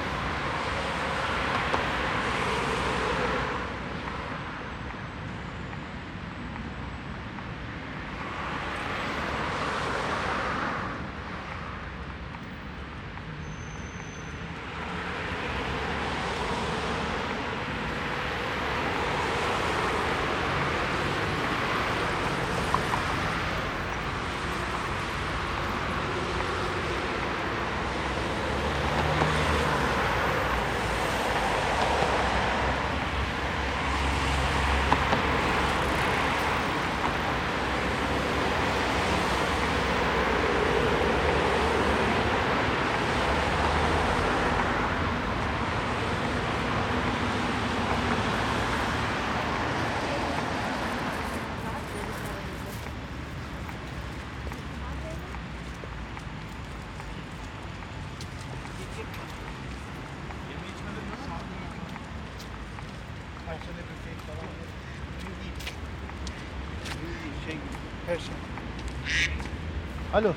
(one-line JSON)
{"title": "Osloer/Stockholmer Straße, Berlin, Deutschland - Osloer/Stockholmer Straße, Berlin - heavy traffic, passers-by", "date": "2012-10-13 14:39:00", "description": "Osloer/Stockholmer Straße, Berlin - heavy traffic, passers-by.\n[I used the Hi-MD-recorder Sony MZ-NH900 with external microphone Beyerdynamic MCE 82]", "latitude": "52.56", "longitude": "13.38", "altitude": "43", "timezone": "Europe/Berlin"}